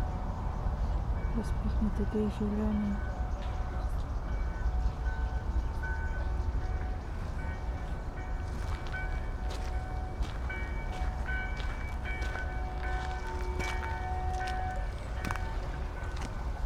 sonopoetic path, Maribor, Slovenia - walking poem
steps, blackbird, passer-by, distant cheering, stream, snow, spoken words, bells ...
6 March 2013, 18:01